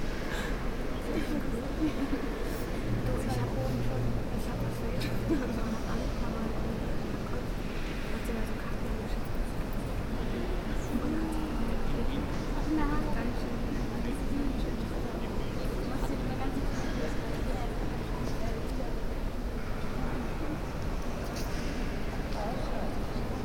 inside the cathedral - a tourist guide explaning historical details of the church
soundmap nrw - social ambiences and topographic field recordings